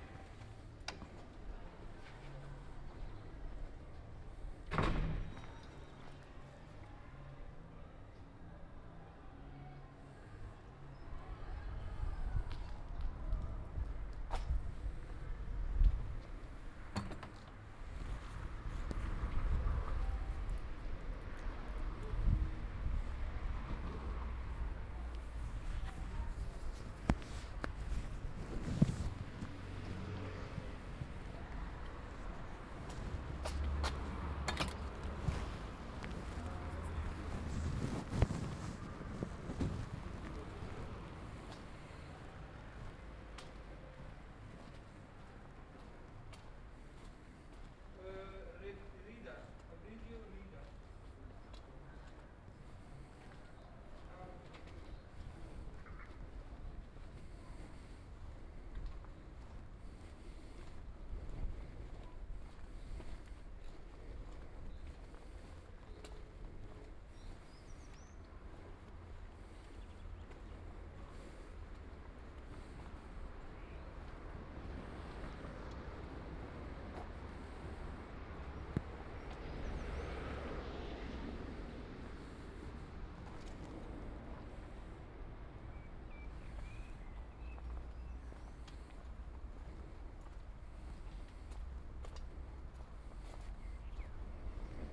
Ascolto il tuo cuore, città. I listen to your heart, city. Several chapters **SCROLL DOWN FOR ALL RECORDINGS** - Afternoon walk with bottles in the garbage bin in the time of COVID19 Soundwalk
"Afternoon walk with bottles in the garbage bin in the time of COVID19" Soundwalk
Chapter XLV of Ascolto il tuo cuore, città. I listen to your heart, city
Monday April 13th 2020. Short walk in San Salvario district in Pasquetta afternoon, including discard of bottles waste, thirty four days after emergency disposition due to the epidemic of COVID19.
Start at 2:36 p.m. end at 3:00 p.m. duration of recording 23'34''
The entire path is associated with a synchronized GPS track recorded in the (kml, gpx, kmz) files downloadable here:
Piemonte, Italia, 13 April 2020